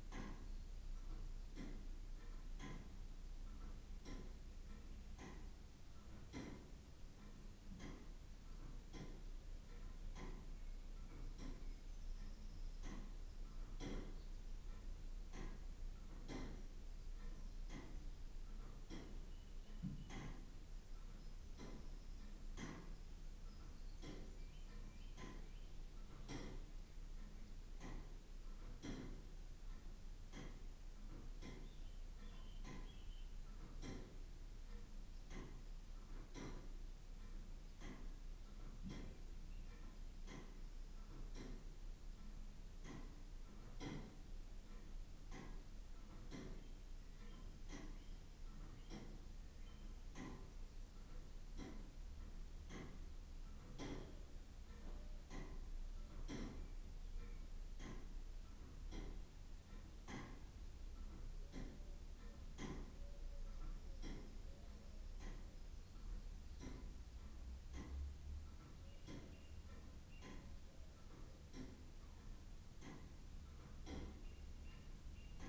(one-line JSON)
{"title": "Mere Grange, Fridaythorpe, Driffield, UK - inside St Marys parish church ...", "date": "2019-07-16 09:20:00", "description": "inside St Mary's parish church ... SASS ... background noise ... traffic ... bird calls ... song ... wren ... collared dove ... song thrush ...", "latitude": "54.02", "longitude": "-0.67", "altitude": "174", "timezone": "Europe/London"}